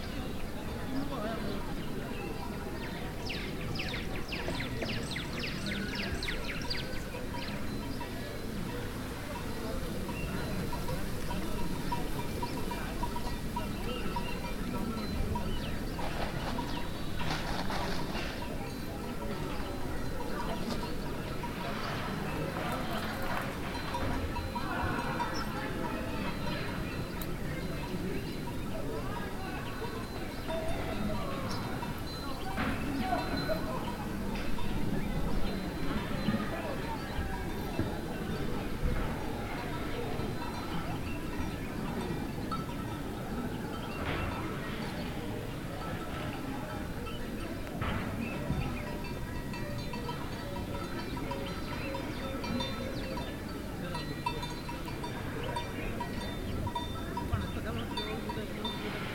Simatelele, Binga, Zimbabwe - Sounds near the school grounds...
… I walked off a bit from the meeting of the women, towards the school… midday sounds from the street, from a nearby borehole, and from the school grounds...
17 June 2016